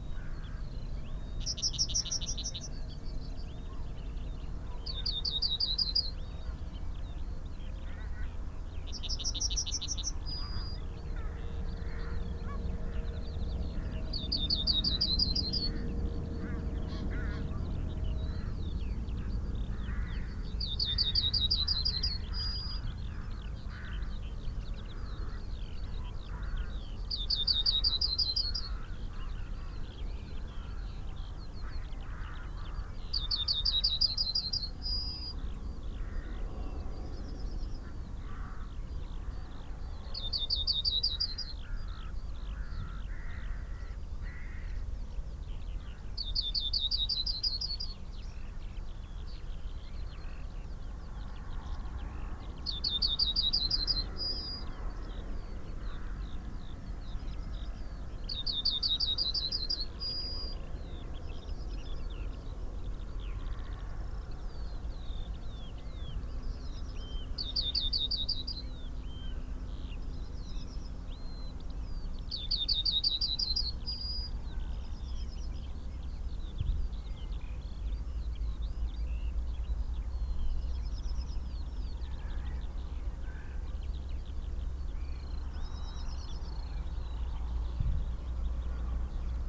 {"title": "Green Ln, Malton, UK - yellowhammer ... call ... song", "date": "2021-04-14 07:30:00", "description": "yellowhammer ... call ... song ... xlr SASS to Zoom H5 ... bird call ... song ... from wood pigeon ... pheasant ... skylark ... red-legged partridge ... herring gull ... linnet ... crow ... rook ... chaffinch ... blackbird ... mew gull ... taken from unattended extended unedited recording ...", "latitude": "54.12", "longitude": "-0.56", "altitude": "93", "timezone": "Europe/London"}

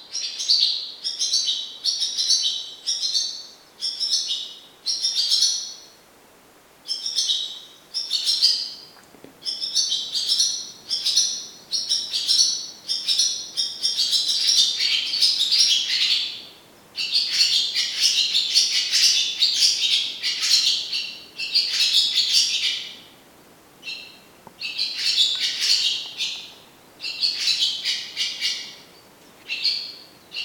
{"title": "Petershagen, Germany - Barn Swallow", "date": "2012-04-30 08:46:00", "description": "Barn Swallow in a farm house in Petershagen-Bierde in the morning.", "latitude": "52.37", "longitude": "9.06", "altitude": "45", "timezone": "Europe/Berlin"}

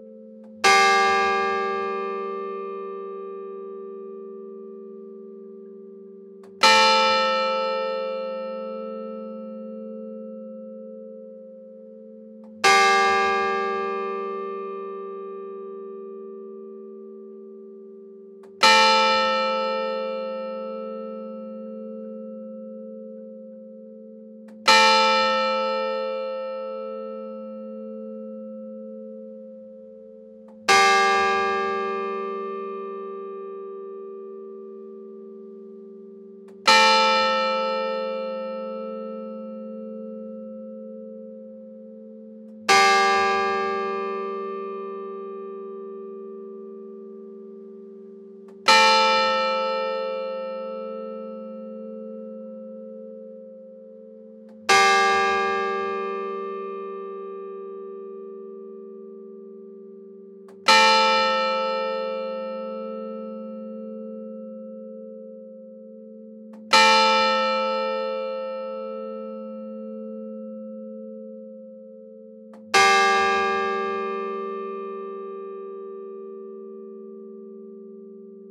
{"title": "Rue de l'Abbaye, Belhomert-Guéhouville, France - Belhomert - Église St-Jean", "date": "2019-11-12 10:00:00", "description": "Belhomert (Eure-et-Loir)\nÉglise St-Jean\nLe Glas (sur 2 cloches)", "latitude": "48.50", "longitude": "1.06", "altitude": "200", "timezone": "Europe/Paris"}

